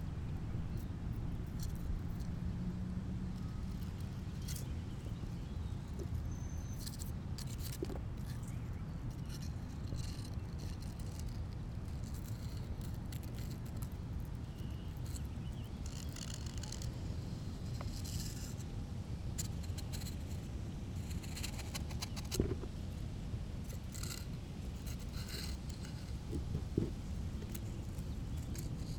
Washington Park, South Doctor Martin Luther King Junior Drive, Chicago, IL, USA - Summer Walk 1
Recorded with Zoom H2. Recording of my interactive soundwalk.
Cook County, Illinois, United States of America, 18 June 2011, 13:15